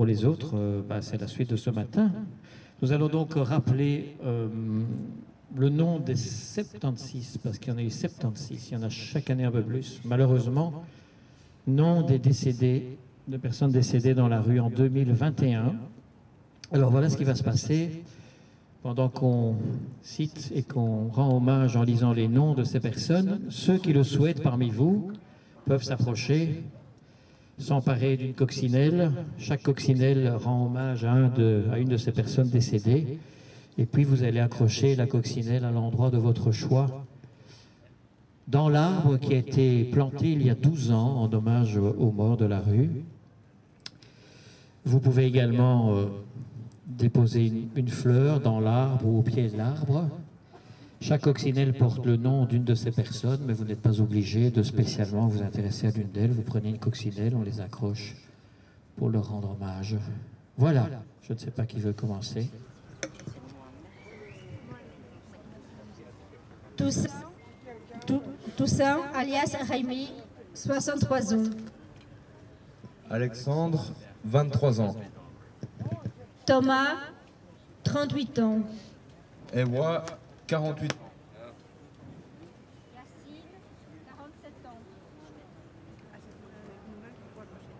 Place de l'Albertine, Bruxelles, Belgique - Reading the names of the 76 homeless people who died on the street in 2021
A tree was planted 12 years ago to honor the homeless who died on the streets.
Un arbre a été planté il y a 12 ans pour rendre hommage aux morts de la rue.
Chaque année la liste de tous ceux qui sont morts dans la rue est lue ici.
Tech Note : Olympus LS5 internal microphones.
Brussel-Hoofdstad - Bruxelles-Capitale, Région de Bruxelles-Capitale - Brussels Hoofdstedelijk Gewest, België / Belgique / Belgien